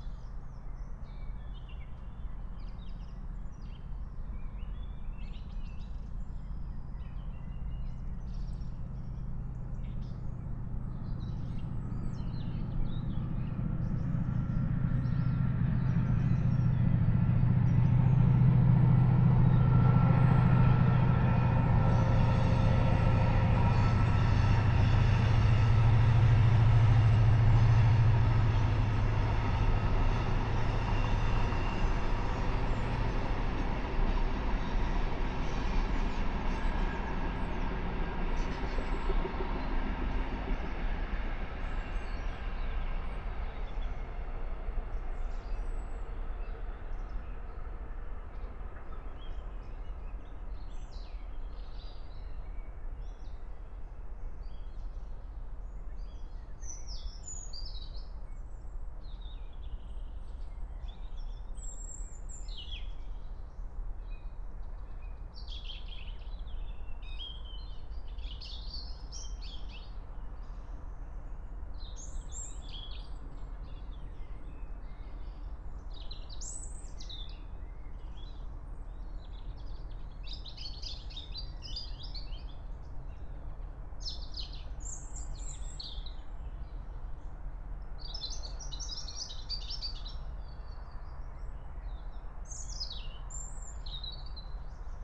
04:30 Berlin, Alt-Friedrichsfelde, Dreiecksee - train junction, pond ambience